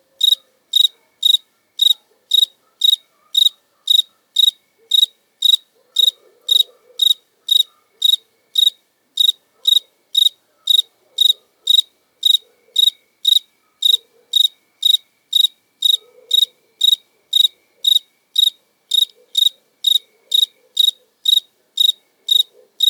Tepoztlán, Mexico - Cricket singing during the night
In the small village of Tepoztlan (Mexico), close recording of a cricket.
Ambience of the village in background (dogs sometimes, light music, church bell far away).
Mono Recording by a Schoeps CCM41
On a Sound Devices 788T
Rx Noise applied
Recorded on 2nd of January 2013
Tepoztlán, Mor., Mexico, 2 January 2013